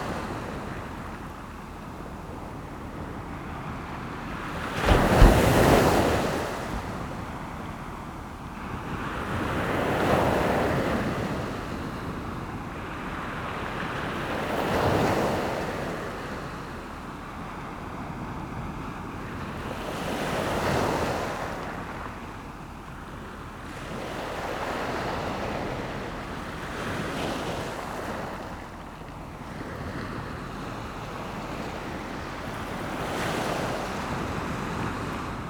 Amble, Morpeth, UK - Falling tide ... Amble ...
Falling tide ... Amble ... lavalier mics on T bar fastened to mini tripod ... bird calls from passing black-headed gulls ...
21 September 2017, 06:00